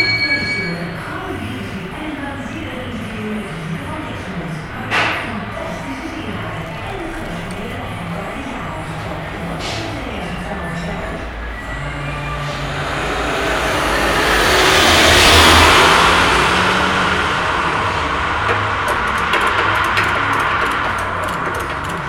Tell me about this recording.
Binckhorst Mapping Project: Komeetweg